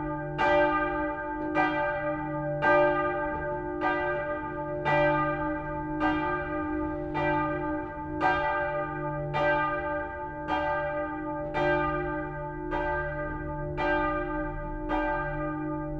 La Bouille, France - La Bouille bell
La Bouille bell recorded just before eight o'clock. It's a beautiful bell for a small village.